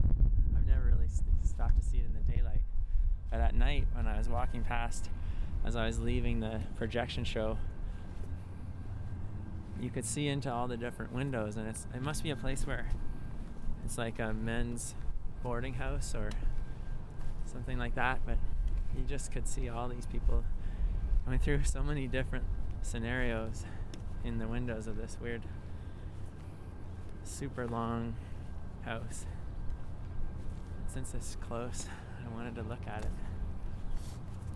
East Village, Calgary, AB, Canada - King Eddy, Rooming House
This is my Village
Tomas Jonsson
13 April 2012, ~17:00